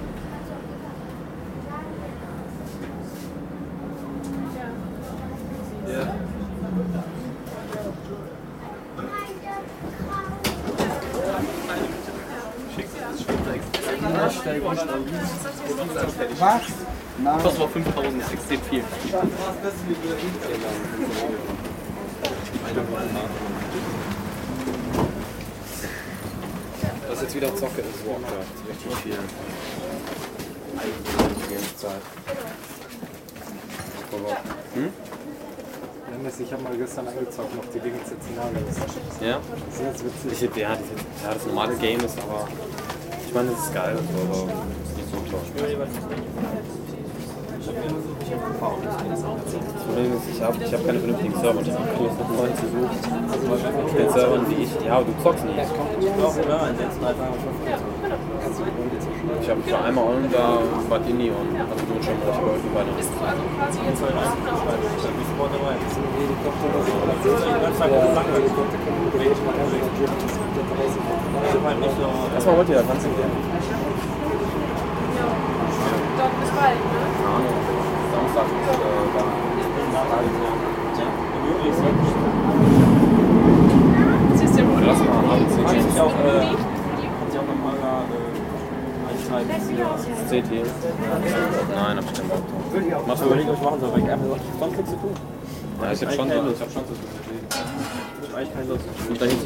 Tram ride from station Eifelstrasse to station Rudolfplatz. Tram changes to subway after 2 station.
recorded july 4th, 2008.
project: "hasenbrot - a private sound diary"